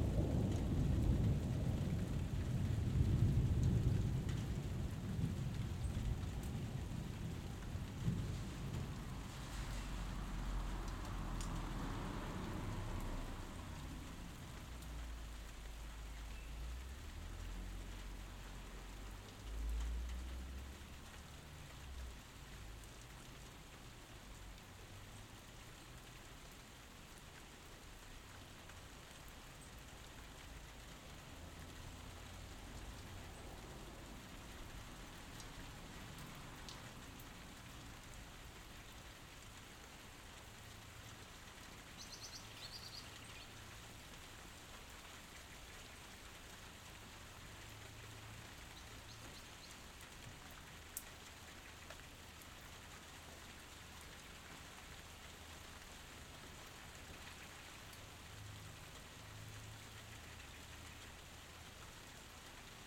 Birdwood Rd, Cambridge, UK - City Thunderstorm
Thunderstorm recorded from garden under shelter. Birds singing and searching for food, passing traffic and general street noise can be heard.
Zoom F1 and Zoom XYH-6 Capsule
United Kingdom, June 2020